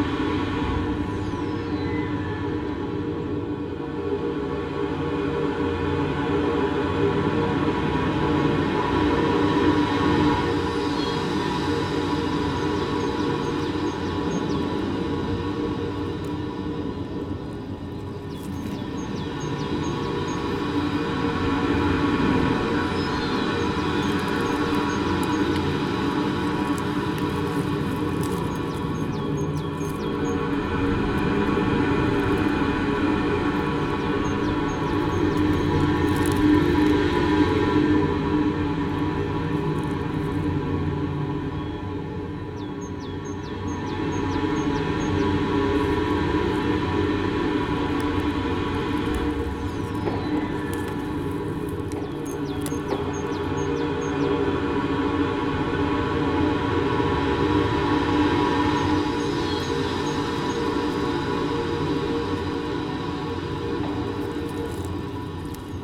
Hornické muzeum Vinařice, Czechia - Parní těžní stroj Ringhofer z roku 1905
Dvoučinný parní stroj firmy Ringhoffer Praha - Smíchov zakoupila na Světové výstavě v Paříži v roce 1905 Pražská železářská společnost. Na šachtě Mayrau ve Vinařicích u Kladna byl instalován a v provozu až do roku 1994. Je poháněn elektromotorem. Zvuk byl nahrán u venkovní zdi u železné roury, která odváděla stlačený vzduch z pístů. Do dýchání stroje zní letadlo a ptačí zpěv.